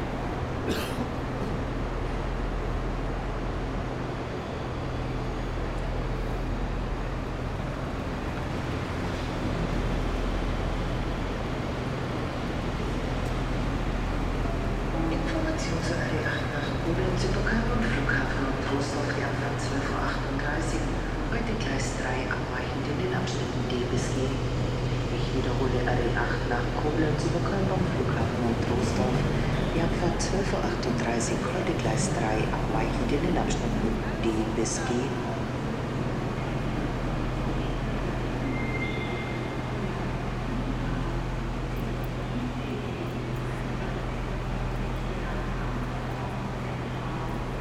klein holland - Gleiss Fünf
Zoom H6 XY 90° mic.
4 april 2018, 13h32
Nordrhein-Westfalen, Deutschland, 4 April, ~14:00